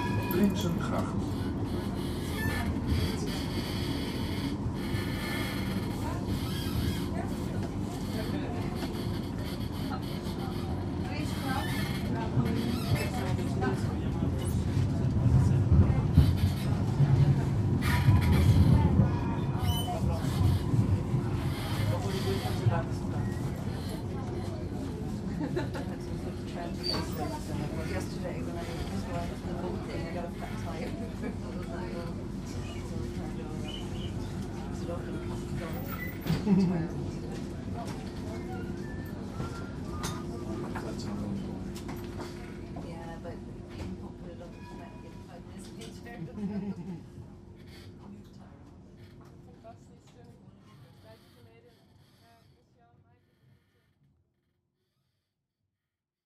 Tram Line 1, Amsterdam
Interior of Tram Line 1 in Amsterdam.